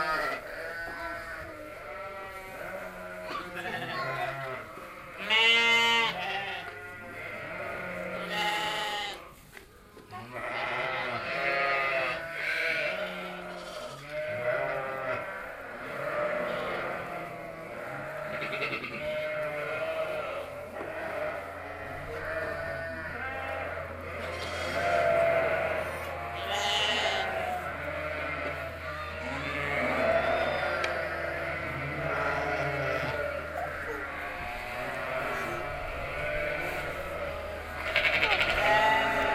easter sheeps on the meadow
international soundmap : social ambiences/ listen to the people in & outdoor topographic field recordings